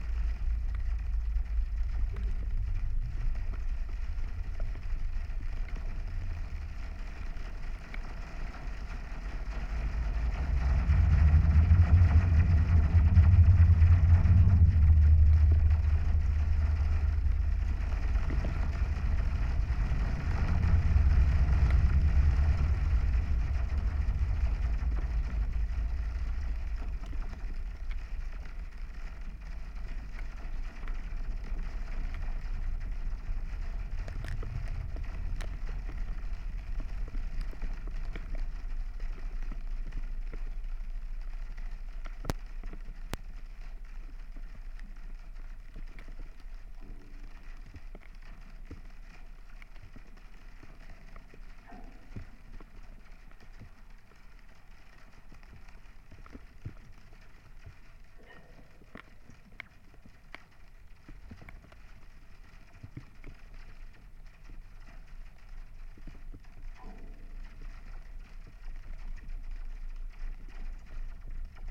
Wet, snowy day. A pair of contact microphones and geophone on aqua jump fence.